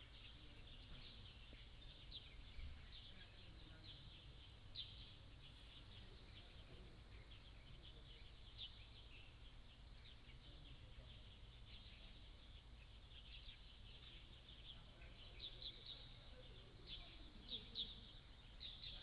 津沙聚落, Nangan Township - Old village
Small village, Birdsong
福建省 (Fujian), Mainland - Taiwan Border